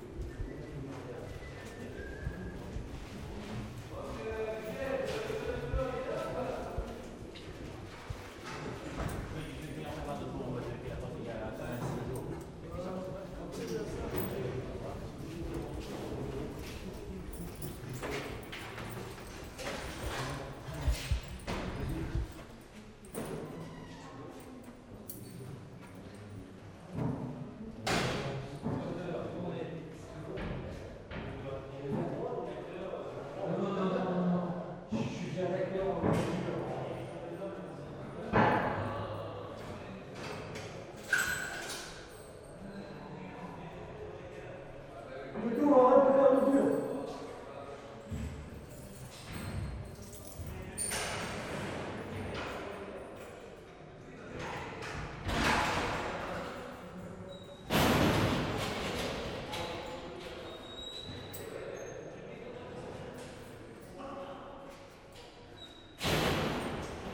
dans les couloir de la prison
enregistré lors du film Fleur de sel darnaud selignac
Saint-Martin-de-Ré, France